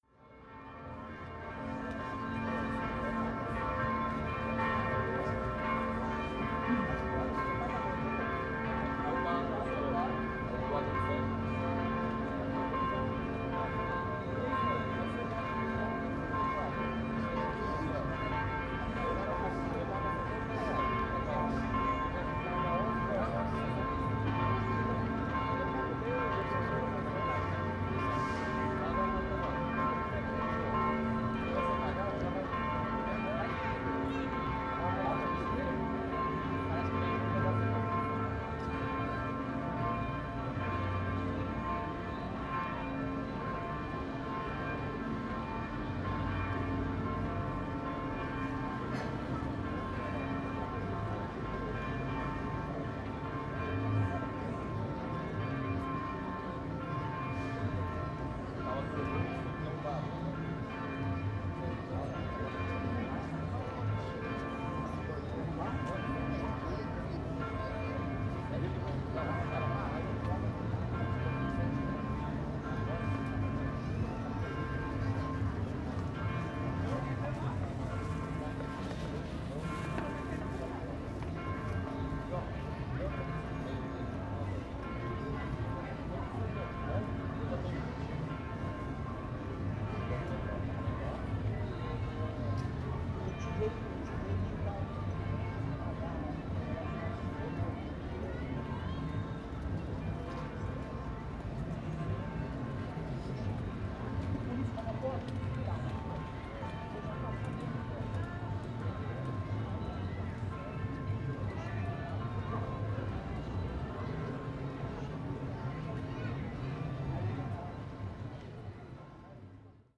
Panorama sonoro: Sinos da Catedral localizados nas proximidades da Praça Marechal Floriano Peixoto antecipando o início da missa de quarta-feira às 12 horas. Pessoas transitavam pelo Calçadão, veículos circulavam pelas ruas próximas, lojas emitiam músicas e locutores anunciavam ofertas e produtos.
Sound panorama: Bells of the Cathedral located near the Marechal Floriano Peixoto Square anticipating the beginning of the mass on Wednesday at 12 o'clock. People drove by the Boardwalk, vehicles circled the nearby streets, shops issued music and announcers announced offers and products.